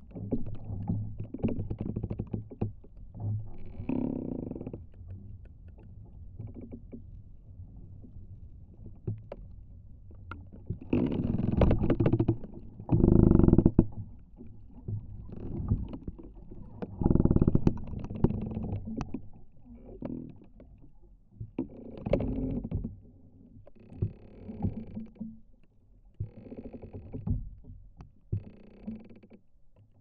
Šeimyniškiai, Lithuania, fallen tree
Contact microphone on a wind-broken tree
Utenos apskritis, Lietuva, 17 May 2022, ~18:00